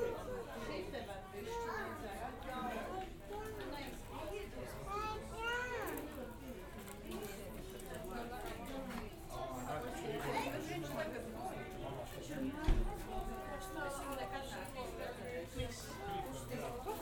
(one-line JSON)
{"title": "Rīga, Latvia, zoo, at tigers place", "date": "2022-08-13 13:45:00", "description": "watching tigers in zoo. sennheiser ambeo smart headset", "latitude": "57.01", "longitude": "24.16", "altitude": "12", "timezone": "Europe/Riga"}